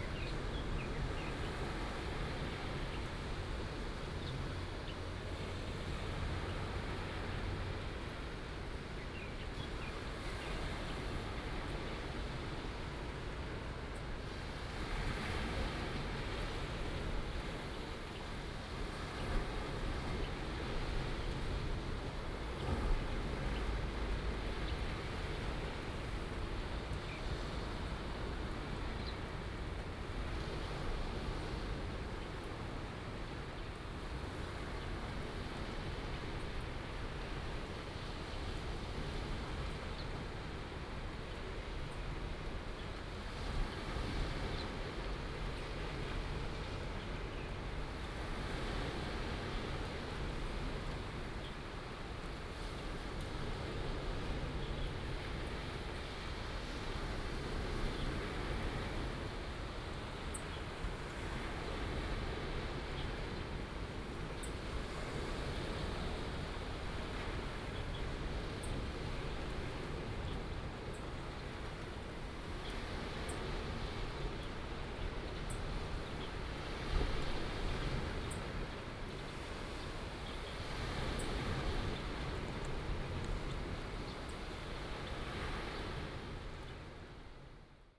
白沙灣, 石門區德茂里 - at the seaside
at the seaside, Sound of the waves, Bird sounds
New Taipei City, Taiwan